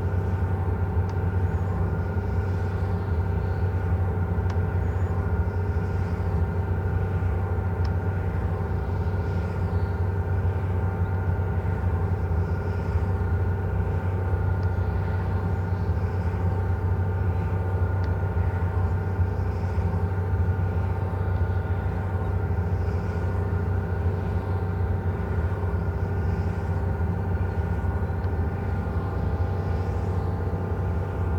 near Allrath, Germany - Windgenerator, sound at the door into the tower
Wind generators are a green face of energy production in this area otherwise dominated by huge opencast brown coal mines and associated power stations. All are owned by the company RWE AG, one of the big five European energy companies. Each wind generator has different sound.